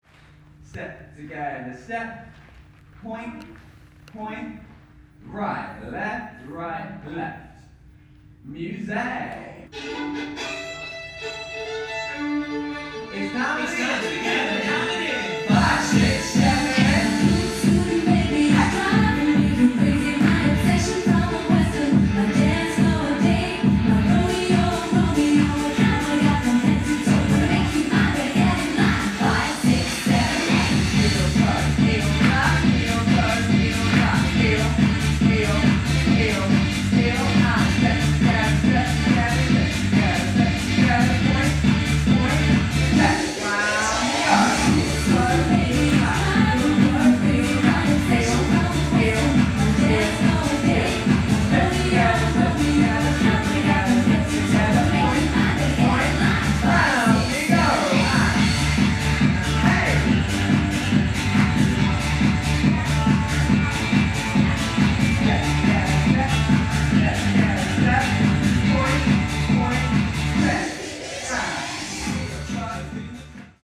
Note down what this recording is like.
Recorded in The Queens Room on board the Queen Mary 2 while crossing The North Atlantic bound for New York. Recorded with a Mix Pre 3 using 2 Beyer lavaliers.